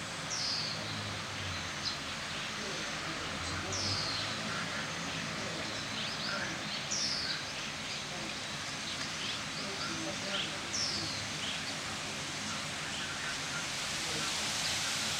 Japan, Shiga, Otsu, Sakamoto, 梅林こども遊園地 - 202006221826 Bairin Childrens Playground
Title: 202006221826 Bairin Children's Playground
Date: 202006221826
Recorder: Sound Devices MixPre-6 mk1
Microphone: Davinci Head mk2
Technique: Binaural Stereo
Location: Sakamoto, Shiga, Japan
GPS: 35.075577, 135.870929
Content: wind, park, summer, 2020, japan, cars, birds, binaural, HRTF, sakamoto, otsu, shiga, bairin, playground
滋賀県, 日本 (Japan), 22 June 2020